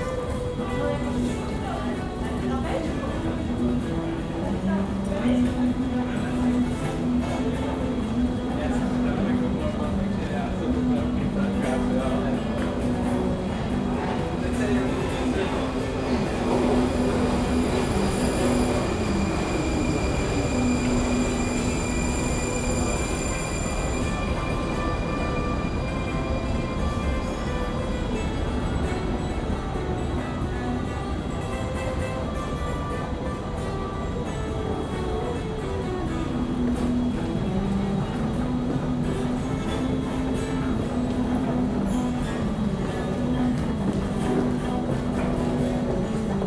Praha Zelivskeho metro station
musician playing along he rythms of the escalator, at zelivskeho metro station
23 June